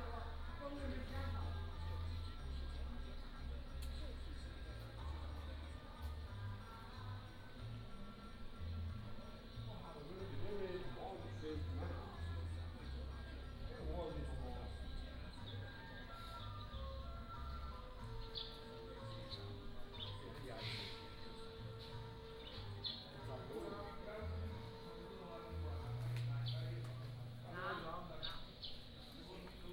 馬祖村, Nangan Township - Small village streets
Small village streets, Small village mall, Birds singing
福建省 (Fujian), Mainland - Taiwan Border, 15 October, 09:07